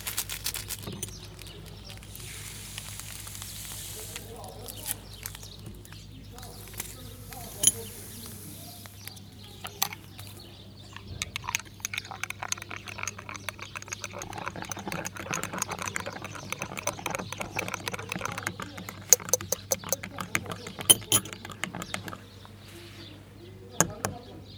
Cafe em Barca dAlva, Douro, Portugal. Mapa Sonoro do rio Douro. Caffe in Barca dAlva, Douro, portugal. Douro River Sound Map
19 February 2014